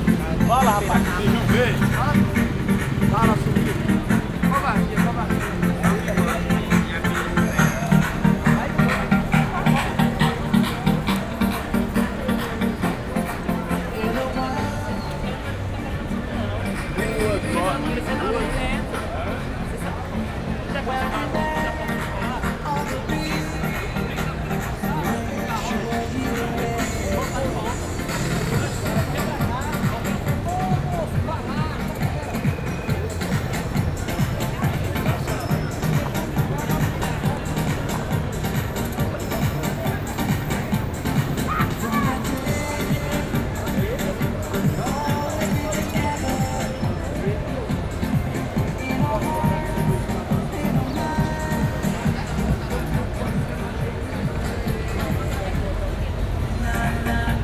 Sao Paulo, entrance to the Mercado Municipal (market hall)